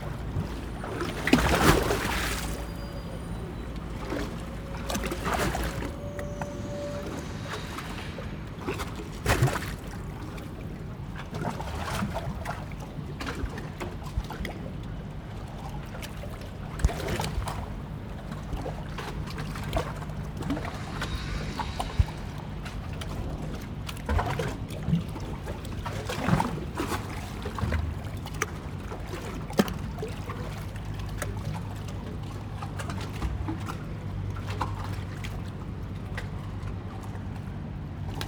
The Thames is a fast flowing river and tides rise and fall surprisingly quickly. At this point you are extremely close to the water and can feel strength of the current and its powerful flow.
England, United Kingdom